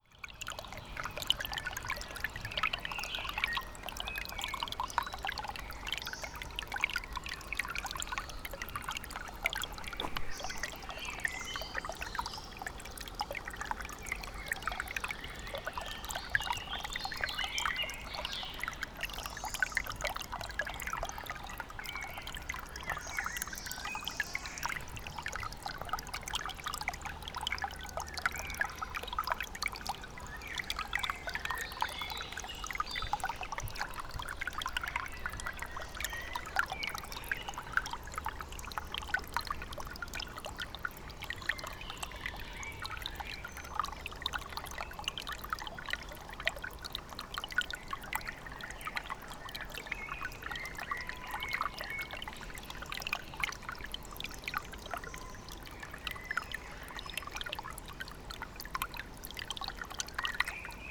Poznan, Rusalka lake - Bogdanka brook
gentle rustle of Bogdanka brook flowing over stones, pebbles, sticks and leaves.